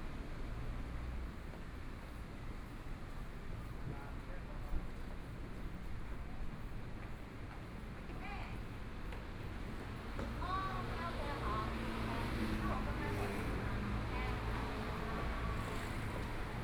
Zhongshan N. Rd., Zhongshan District - Walking on the road
Walking on the road, Traffic Sound, Aircraft traveling through, Binaural recordings, Zoom H4n + Soundman OKM II
Taipei City, Taiwan, 20 January 2014, ~13:00